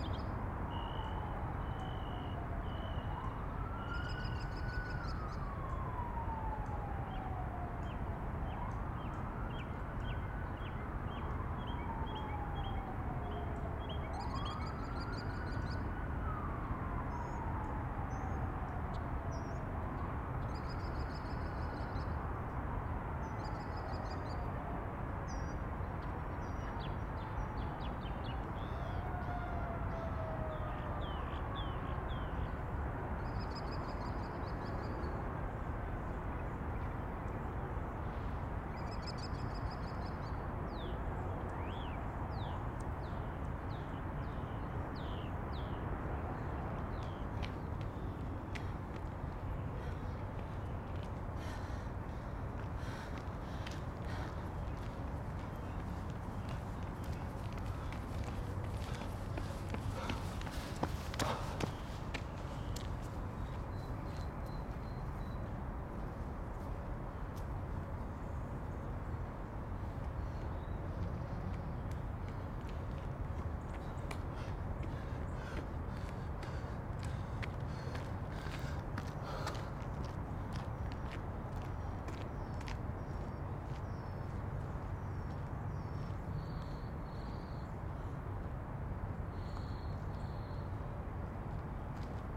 Summit of Bernal Hill, Dawn World Listening Day
Freeways US101 and I-280, left-over 4th of July fireworks in Mission District, California towhee? hummingbird? dark-eyed junco trills and tsits, mockingbird, mourning dove, ships whistle, American kestrel, fire engine sirens, joggers, World LIstening DAy